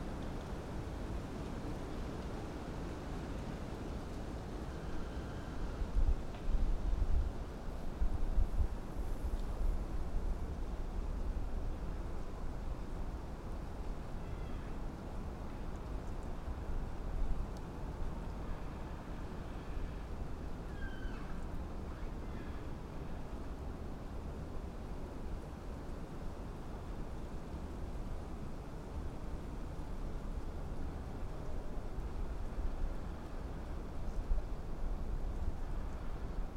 dale, Piramida, Slovenia - distant creaks
winds and creaking trees from afar
Podravska, Vzhodna Slovenija, Slovenija